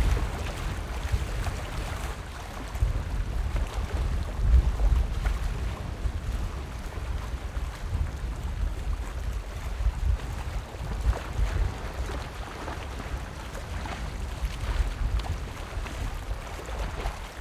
後寮漁港, Baisha Township - Windy
On the bank, Waves and tides, Windy
Zoom H6 + Rode NT4
22 October, ~12:00